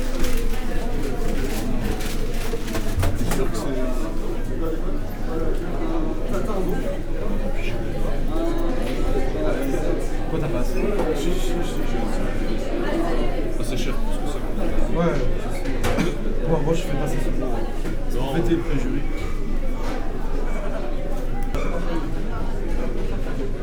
Centre, Ottignies-Louvain-la-Neuve, Belgique - In a bar
Long file of people waiting in a bar called Izobar, which is a fast food.